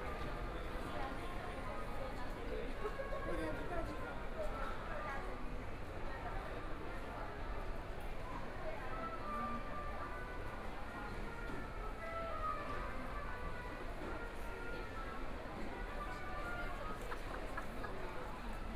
{
  "title": "Miramar Entertainment Park, Taipei City - Shopping malls",
  "date": "2014-02-16 19:09:00",
  "description": "Walking around the shopping mall, Binaural recordings, Zoom H4n+ Soundman OKM II",
  "latitude": "25.08",
  "longitude": "121.56",
  "timezone": "Asia/Taipei"
}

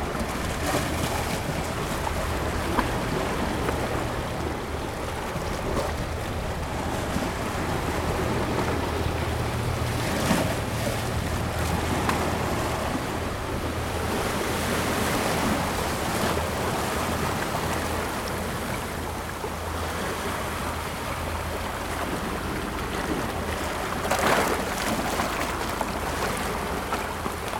{
  "title": "Επαρ.Οδ. Τήνου-Καλλονής, Τήνος, Ελλάδα - The Sound of Waves at seaside of Stavros",
  "date": "2018-07-23 16:15:00",
  "description": "The Sound of Waves at Seaside of Stavros.\nRecorded by the soundscape team of E.K.P.A. university for KINONO Tinos Art Gathering.\nRecording Equipment: Ζoom Η2Ν",
  "latitude": "37.54",
  "longitude": "25.15",
  "timezone": "Europe/Athens"
}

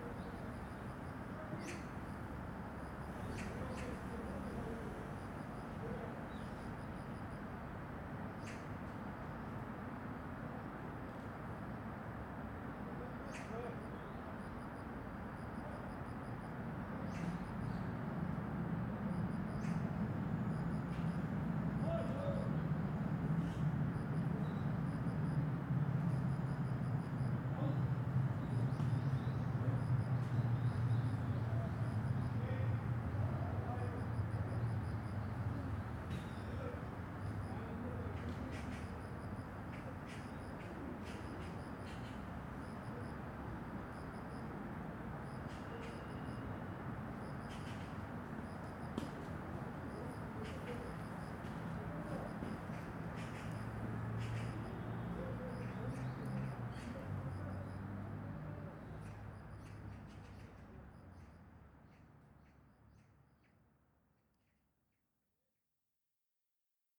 {
  "title": "대한민국 서울특별시 서초구 반포동 1294 - Banpo Jugong Apartment, Tennis Court",
  "date": "2019-09-09 14:55:00",
  "description": "Banpo Jugong Apartment, Tennis Court, People Playing Tennis, Cricket\n반포주공1단지, 늦여름, 테니스치는 사람들, 풀벌레",
  "latitude": "37.50",
  "longitude": "126.98",
  "altitude": "19",
  "timezone": "Asia/Seoul"
}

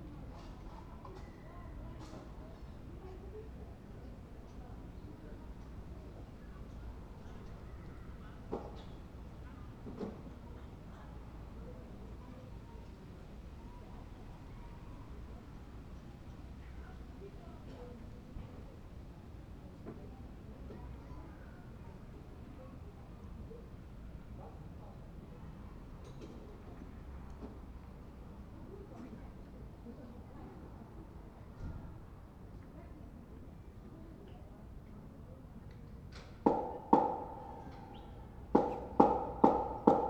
{
  "title": "berlin, wildmeisterdamm: gropiushaus, innenhof - the city, the country & me: inner yard of gropiushaus",
  "date": "2011-08-03 17:40:00",
  "description": "voices from the flats, busy workers, a man talking with his dog\nthe city, the country & me: august 3, 2011",
  "latitude": "52.43",
  "longitude": "13.47",
  "altitude": "48",
  "timezone": "Europe/Berlin"
}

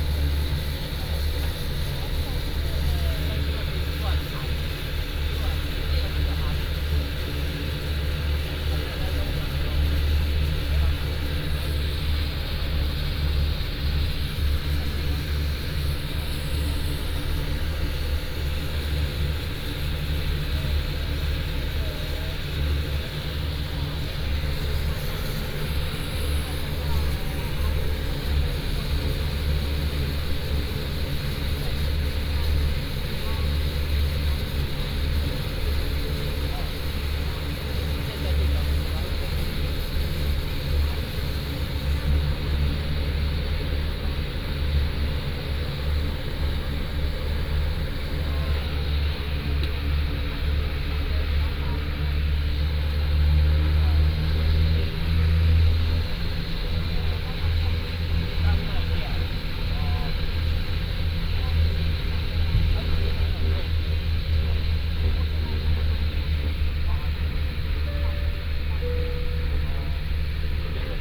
{
  "title": "Taitung Airport, Taiwan - In the cabin",
  "date": "2014-10-30 12:16:00",
  "description": "In the cabin, Aircraft before takeoff, Small aircraft",
  "latitude": "22.76",
  "longitude": "121.11",
  "altitude": "38",
  "timezone": "Asia/Taipei"
}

